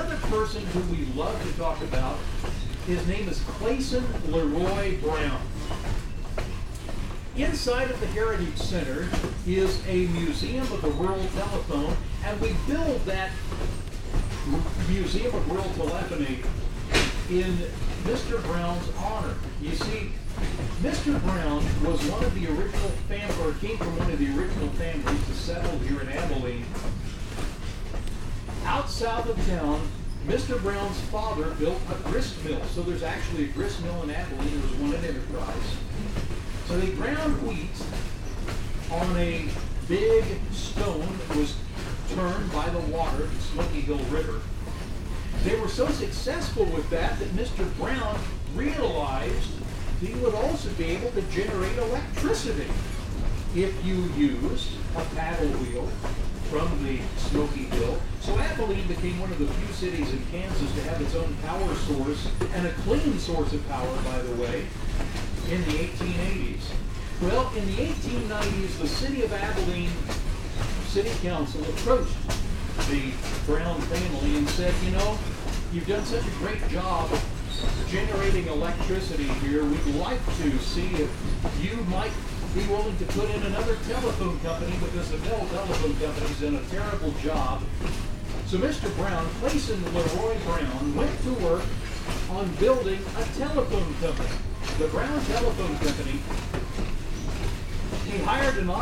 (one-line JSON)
{"title": "Grant Township, Dickinson County, near 2200 Avenue, Abilene, KS, USA - Abilene & Smoky Valley Railroad (Return Trip)", "date": "2017-08-27 15:40:00", "description": "Heading west, returning to the depot in Abilene, after a trip to Enterprise. Riding on an excursion train: inside a 1902 wooden KATY (Missouri-Kansas-Texas Railroad) passenger car, pulled by a 1945 ALCO S-1 diesel engine (former Hutchinson & Northern RR). Host Steve Smethers provides local history. Right mic placed near open window. Stereo mics (Audiotalaia-Primo ECM 172), recorded via Olympus LS-10.", "latitude": "38.91", "longitude": "-97.18", "altitude": "350", "timezone": "America/Chicago"}